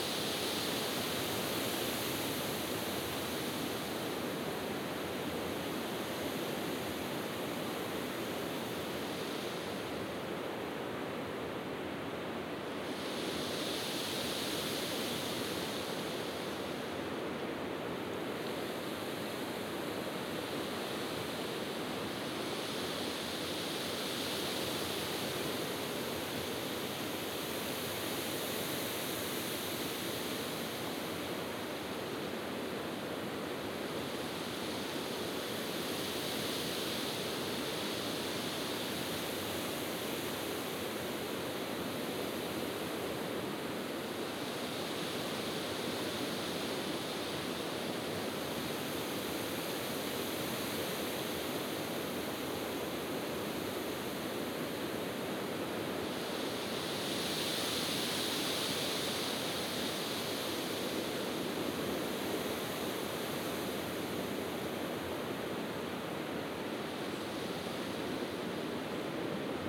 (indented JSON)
{
  "title": "The noise of the wind in the forest, Russia, The White Sea. - The noise of the wind in the forest.",
  "date": "2015-06-21 22:09:00",
  "description": "The noise of the wind in the forest.\nШум ветра в лесу.",
  "latitude": "63.91",
  "longitude": "36.93",
  "timezone": "Europe/Moscow"
}